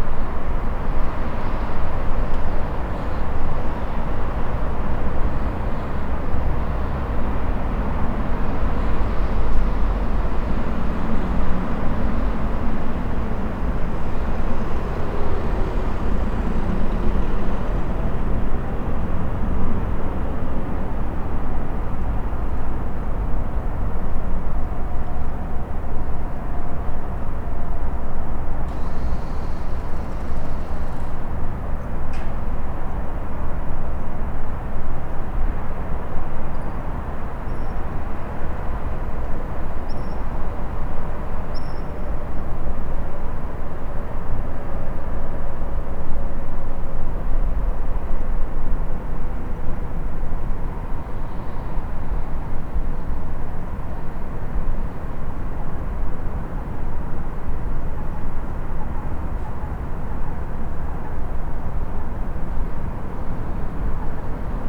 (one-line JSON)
{"title": "Binckhorst, Den Haag - Little Bird", "date": "2012-02-06 18:59:00", "description": "A little bird practicing his diving skills in the cold water between several pieces of floating blocks of ice.\nRecorded using a Senheiser ME66, Edirol R-44 and Rycote suspension & windshield kit.", "latitude": "52.06", "longitude": "4.34", "altitude": "1", "timezone": "Europe/Amsterdam"}